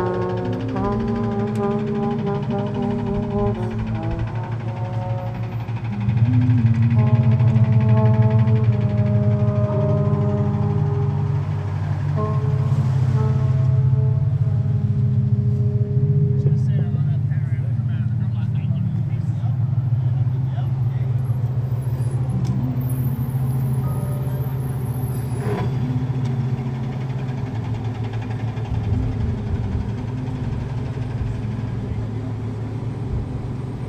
Friedrichshain, Berlin, Germany - unknown artist with trumpet drone by the Berlin Wall & jackhammer in a distance
recording of an unknown artist playing trumpet with effects by the Berlin Wall, also sound of the jackhammer in a distant construction side, to me perfect soundtrack of a Berlin now
14 July 2014